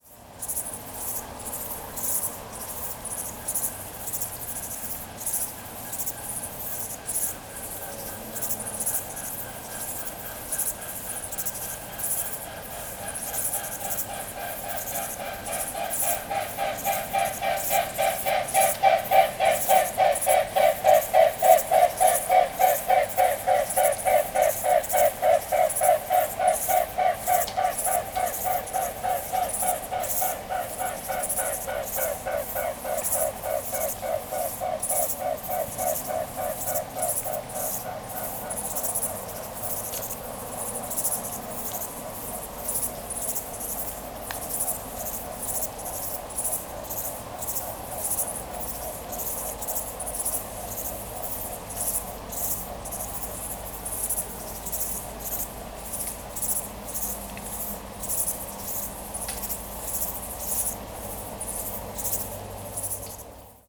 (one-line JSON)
{"title": "Negast forest, Sumpfwald, Rügen - Muteswans fly over", "date": "2021-08-06 03:11:00", "description": "It's the wings, the feathers that make the sound\nzoom f4 and array-board with Pui5024 electret capsules", "latitude": "54.38", "longitude": "13.28", "altitude": "2", "timezone": "Europe/Berlin"}